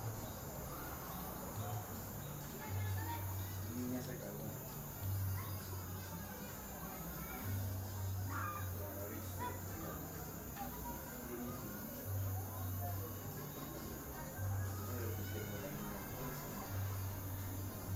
San Jacinto de Buena Fe, Ecuador - Talking with friends: At night on the roof.
Having some beers with friends, you can hear the night ambiance and mood of the typical ecuadorian coast town.
1 March 2016, 21:00